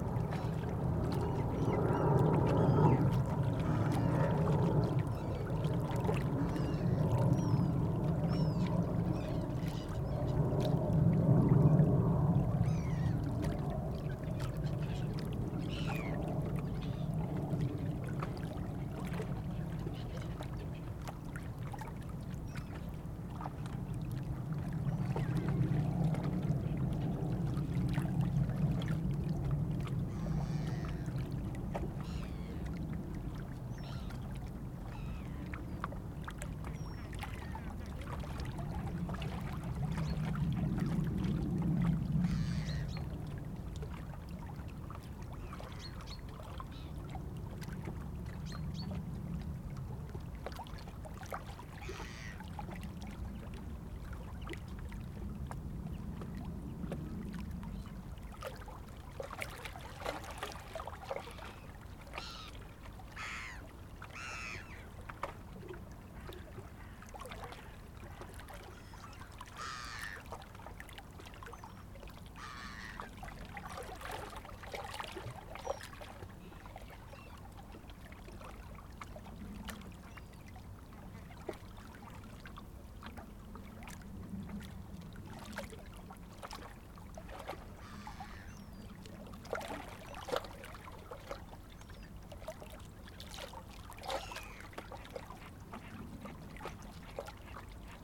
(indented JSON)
{
  "title": "Petit Port, Aix-les-Bains, France - Charters des neiges",
  "date": "2017-12-16 10:35:00",
  "description": "Près du lac du Bourget au bout d'une digue du Petit Port d'Aix-les-Bains les clapotis de l'eau dans les rochers, passages d'avions venant de l'aéroport de Chambéry. C'est l'hiver les touristes viennent skier en Savoie.",
  "latitude": "45.69",
  "longitude": "5.89",
  "altitude": "232",
  "timezone": "Europe/Paris"
}